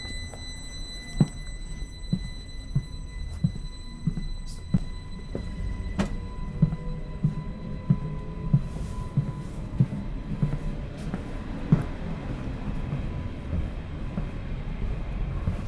Mont-Saint-Guibert, Belgique - Mont-St-Guibert station
At the Mont-Saint-Guibert station, early on the morning.
Going to Flavien's home in Brussels :-)
Waiting from the train, a few people on the platform and a blackbird singing loudly. The train arrives. Inside the train, a person was sleeping, she miss the stop. Waking up, she uses the alarm. It makes a strident painful noise. I leave !
Mont-Saint-Guibert, Belgium, 5 March 2016